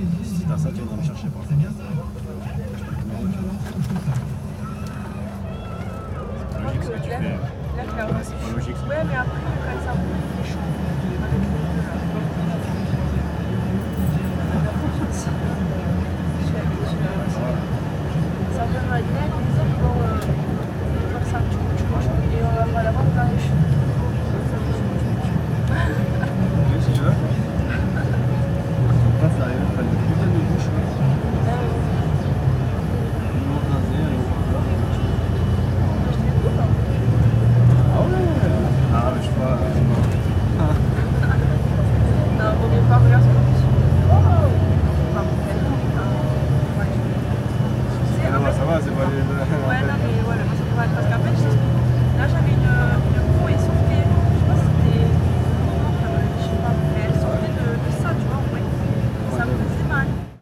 France, Perpignan, on board a bus / a bord d'un bus - On board a bus / A bord d'un bus
On board a bus at the bus stop.
Listen to the signal level rising.
At first, almost no background noise except people's conversations. Then the radio goes up as the driver switches on the ignition (+17 dB), then he turns on the air conditioning and starts driving (+6 dB).